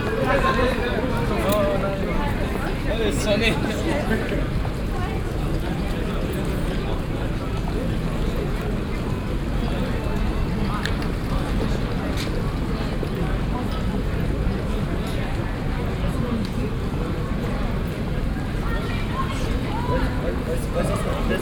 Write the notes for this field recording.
hochbetrieb am feitga nachmittag, gesprächsfetzen, stimmen, schritte, soundmap nrw: social ambiences/ listen to the people - in & outdoor nearfield recordings